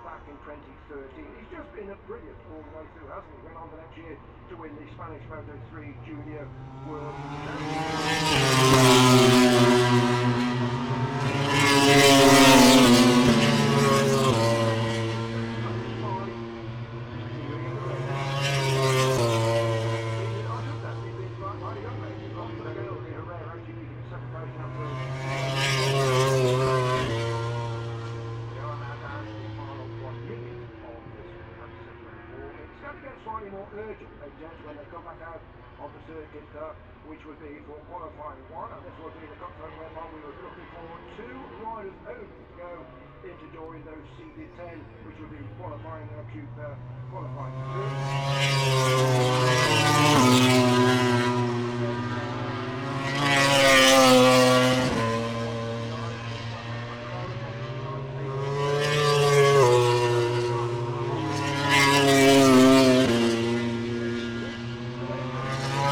Silverstone Circuit, Towcester, UK - british motorcycle grand prix 2019 ... moto grand prix ... fp4 ...
british motorcycle grand prix 2019 ... moto grand prix free practice four ... and commentary ... copse corner ... lavalier mics clipped to sandwich box ...
England, UK, August 2019